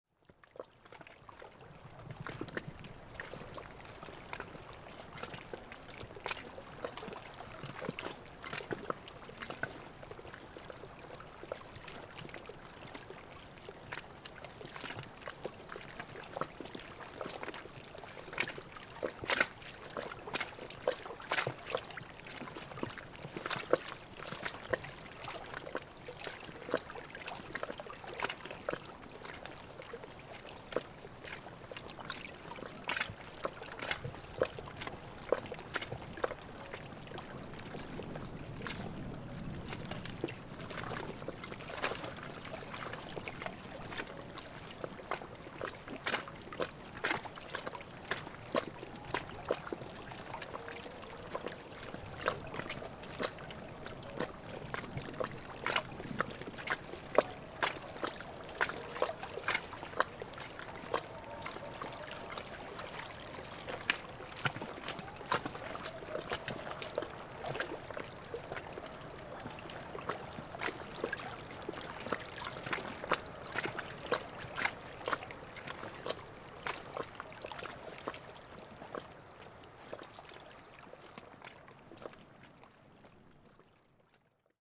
Wavelets at the edge of the lake, we can hear dogs away, Zoom H6